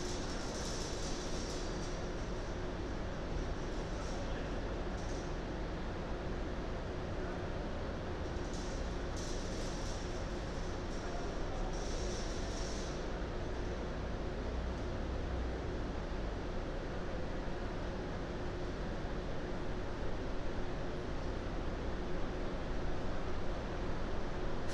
Brno, ÚAN Zvonařka, Brno-Brno-střed, Česko - Central station atmoshere
Zoom H6 + 2 Earsight mics.
Bus central station and there... a beautiful atmosphere is created under the large roof. Taken at a quiet time with little traffic.
Jihovýchod, Česko, May 2022